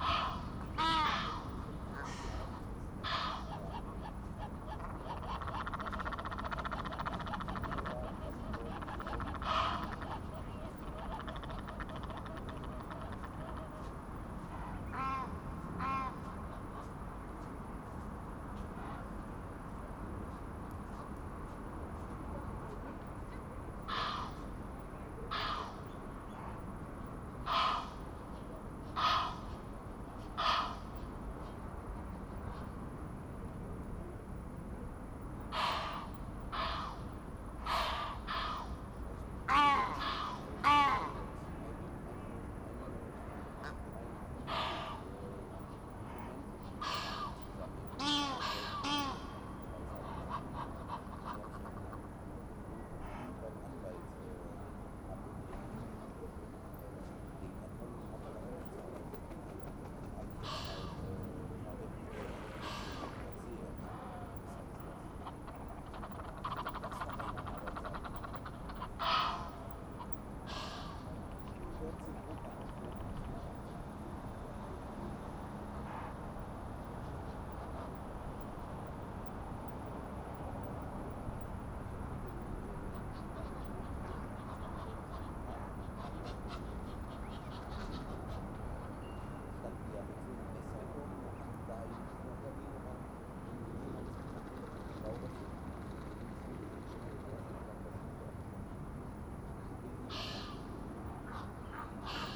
a group of Egyptian geese getting excited about the recordist, complaining from the distance
(Sony PCM D50)
1 August, ~22:00